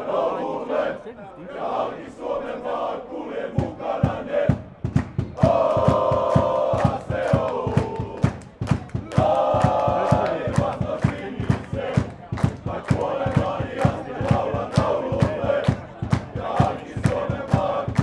Raatin stadion, Oulu, Finland - AC Oulu supporters singing and chanting
Supporters of football team AC Oulu singing and chanting during the first division match between AC Oulu and Jaro. Zoom H5, default X/Y module.
Pohjois-Pohjanmaa, Manner-Suomi, Suomi